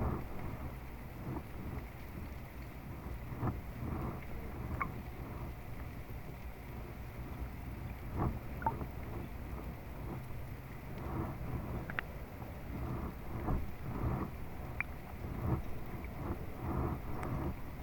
not the best season for hydrophone, but...
21 February 2020, 14:20